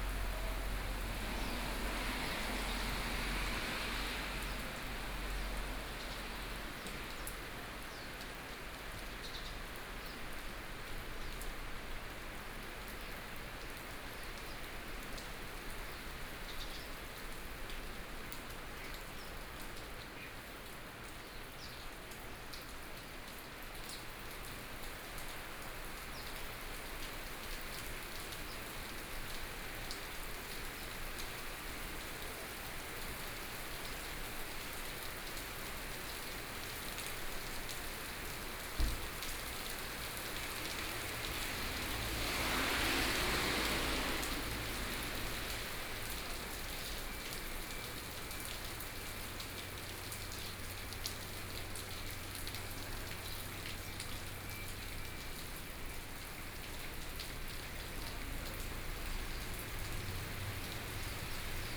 In the temple, Rainy weather, Traffic Sound
Sony PCM D50+ Soundman OKM II